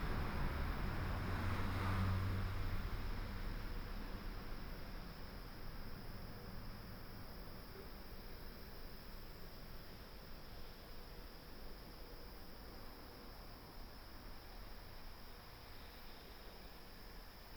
Small temple, The sound of birds, Cicada, Traffic sound, under the tree, Binaural recordings, Sony PCM D100+ Soundman OKM II
Hsinchu County, Guanxi Township, 118縣道7號, 14 August